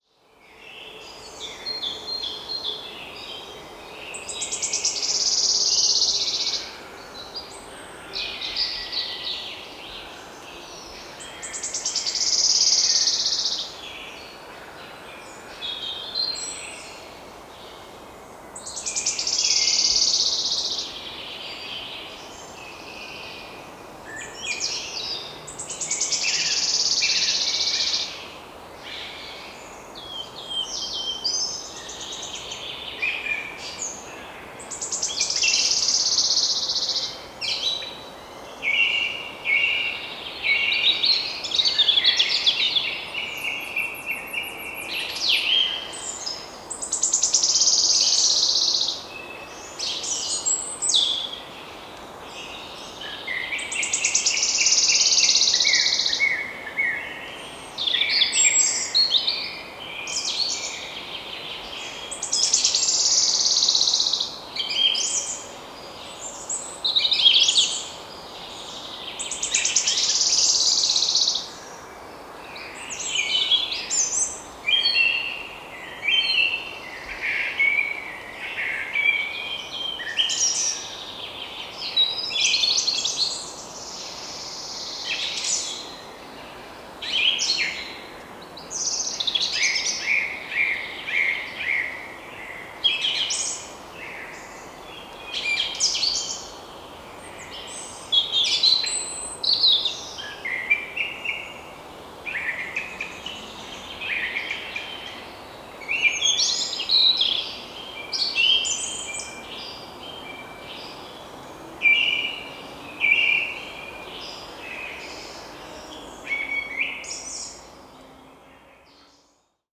Moscow, Biruliovsky arboretum - Birds in the arboretum
Birds.
Sennh MKH-416 (to the east) -> Marantz PMD-661 -> iZotope RXII (EQ, gain).
5 June, 20:43